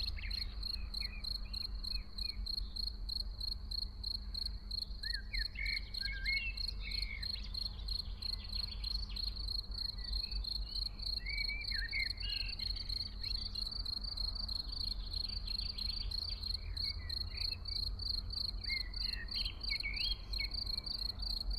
Ettenkirch near lake Constance - Early summer scene in a small village
Vor mehr als 14 Jahren: / More than 14 years ago:
Frühsommerszene in einem kleinen Dorf in der Nähe des Bodensees: Feldgrille, Amseln, Kirchenglocken, Stimmen und einige Autos im Hintergrund.
Early summer scene in a small village near Lake Constance: Field cricket, blackbirds, church bells, voices and some cars in the background.
(Edirol R1, OKM I)